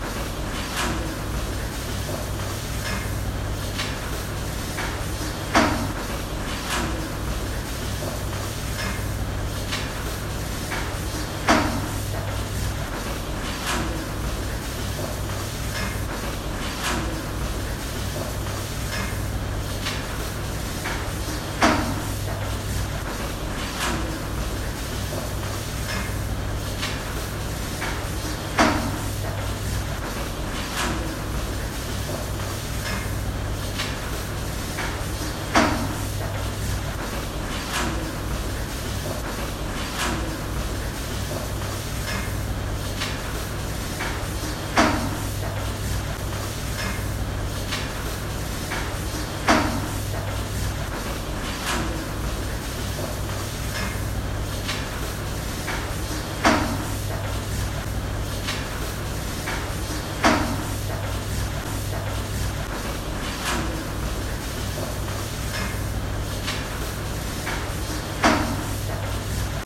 Eichenstraße, Elsdorf, Deutschland - Melkanlage
Klänge der Melkanlage während der Melkzeit. Zu hören sind die Geräusche der durch die Anlage rotierenden Kühe, das Pumpen der Anlage, die Metallgerüste, etc.
Landkreis Rotenburg, Niedersachsen, Deutschland, 20 July 2019